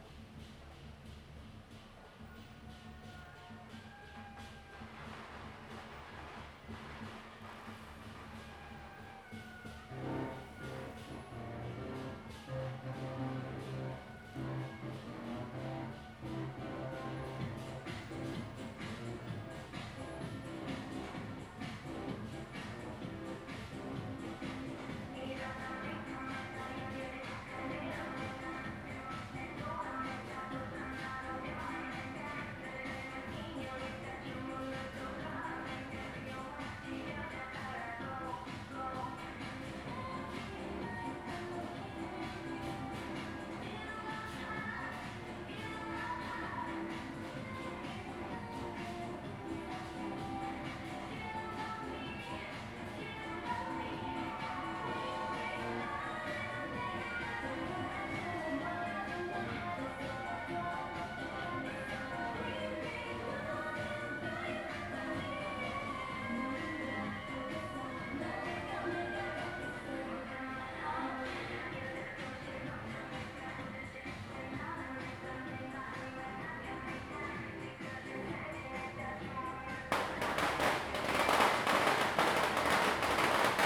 大仁街, Tamsui District, New Taipei City - Traditional festival
Traditional festival parade, Firecrackers, Fireworks sound
Zoom H2n MS+XY
Tamsui District, New Taipei City, Taiwan, 22 May 2015